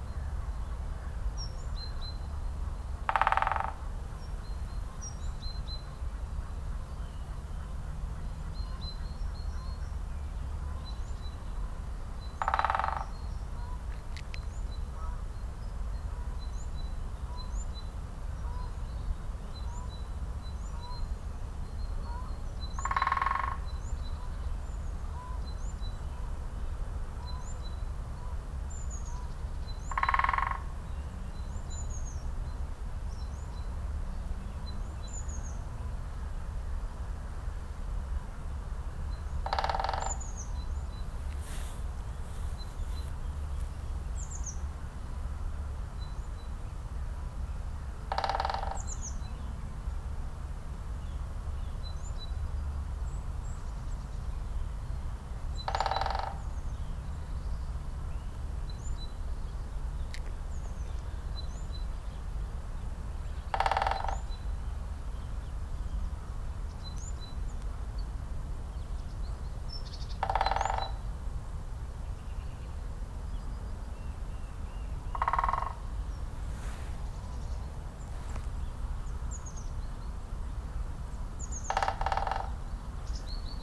Kwartelpad, Den Haag, Nederland - Great Spotted Woodpecker
The sound of a Great Spotted Woodpecker.
2 March, 08:45